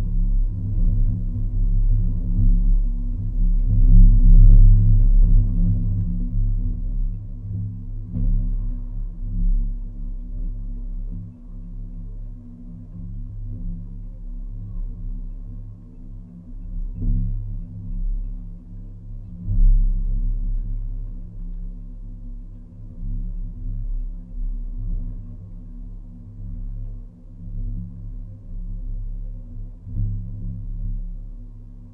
Lunenburg County, NS, Canada - Wind and waves resonating inside the metal jetty support 2
This jetty is built with large hollow metal pipes providing the main supports. Usually they are made from solid wood. The gentle wind and waves resonate inside the pipe taking on the frequencies and harmonics given by its dimensions, which are slightly different from the other pipe supports.
10 October 2015, 17:15